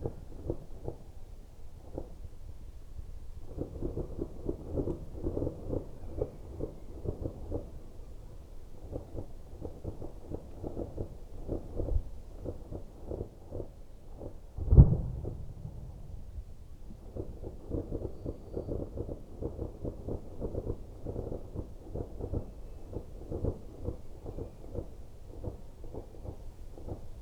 Chapel Fields, Helperthorpe, Malton, UK - distant firework display ...
distant firework display ... with slightly closer tawny owl calling ... xlr SASS on tripod to Zoom F6 ... all sorts of background noise ...